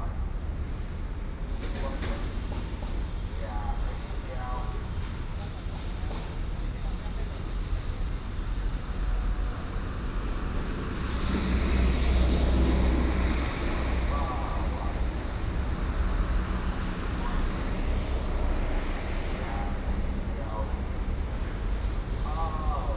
仙洞里, Zhongshan District, Keelung City - by the road
Traffic Sound, by the road, Vendors broadcast audio, Container transport zone
Keelung City, Taiwan, 2 August 2016, 16:06